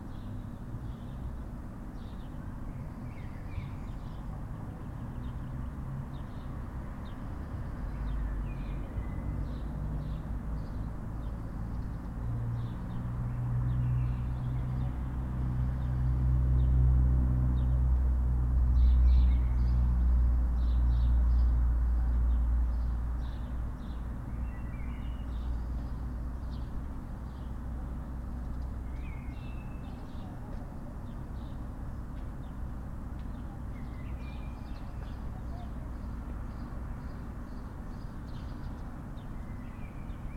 {"title": "Fisksätra, Nacka, Suède - Torg Skulptur", "date": "2013-05-05 19:39:00", "latitude": "59.29", "longitude": "18.25", "altitude": "34", "timezone": "Europe/Stockholm"}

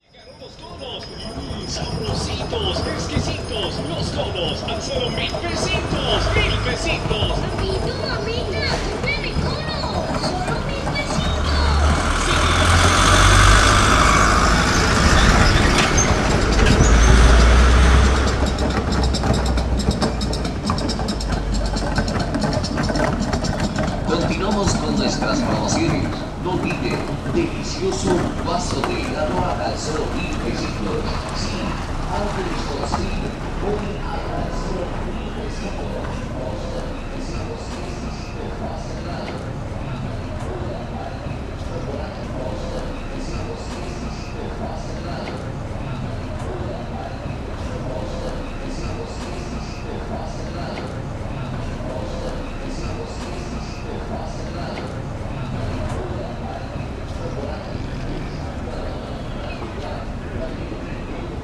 {"title": "Cl., Bogotá, Cundinamarca, Colombia - Icecream Stand.", "date": "2021-05-24 04:00:00", "description": "noisy soundscape. On this neighborhood street, every Thursday an ice cream stand passes by with loud horns, there are heavy cars, airplanes passing by and a building construction in the background.", "latitude": "4.74", "longitude": "-74.06", "altitude": "2561", "timezone": "America/Bogota"}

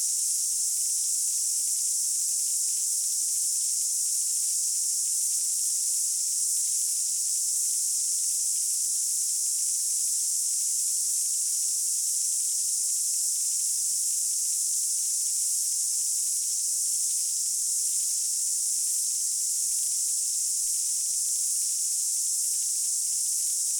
{"title": "Te Mata, Waikato, New Zealand - Cicadas in the Coromandel Forest Park", "date": "2021-01-26 16:14:00", "description": "Surrounded by cicadas between Te Mata and the Coromandel Forest Park.\nRecorded in stereo with two LOM Usi Pro.", "latitude": "-36.94", "longitude": "175.57", "altitude": "350", "timezone": "Pacific/Auckland"}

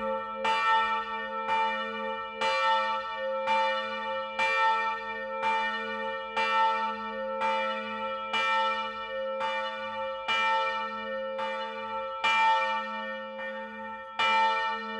{"title": "Rue Henri Dupuis, Saint-Omer, France - St-Omer - Pas de Calais - Carillon de la Cathédrale", "date": "2022-05-13 10:00:00", "description": "St-Omer - Pas de Calais\nCarillon de la Cathédrale\nPetit échantillon des diverses ritournelles automatisées entre 10h et 12h\nà 12h 05 mn, l'Angélus.", "latitude": "50.75", "longitude": "2.25", "altitude": "22", "timezone": "Europe/Paris"}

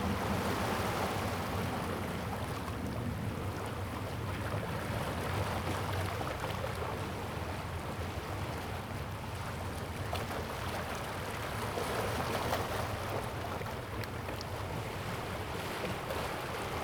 {"title": "溪口, Tamsui District, New Taipei City - sound of the waves", "date": "2016-11-21 16:11:00", "description": "On the coast, Sound of the waves, Aircraft sound\nZoom H2n MS+XY", "latitude": "25.24", "longitude": "121.45", "timezone": "Asia/Taipei"}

